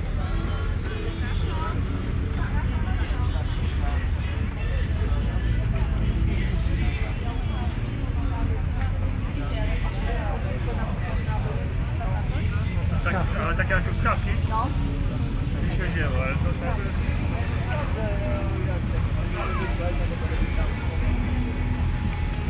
Fair at Park Nitribitta, Krynica-Zdrój, Polska - (653 BI) walk around fair
Binaural walk around fair at Park Nitribitta at Sunday around noon.
Recorded with DPA 4560 on Sound Devices MixPre6 II.
województwo małopolskie, Polska, 26 July